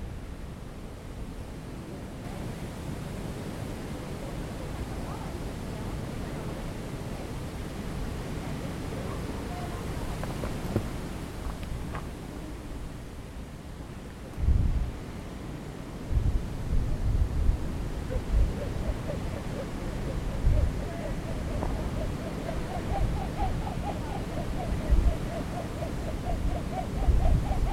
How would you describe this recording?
The highest mountain in the České středohoří, Milešovka (837m), also known as Hromová hora, Milleschauer, Donnersberg is the windiest place in the Czech Republic. The average wind speed is 30.5 km / h, with no wind on average 8 days a year, on average 280 days a year there is a strong wind, there are about 35 storms a year. If it is clear you can see from the top the Giant Mountains, the Jizera Mountains, the Šumava Mountains and sometimes the Alps. The Poet Petr Kabeš watched the weather at the Meteorological Observatory from 1974 to 1977. I tested how the wind could handle the stretched rubber band.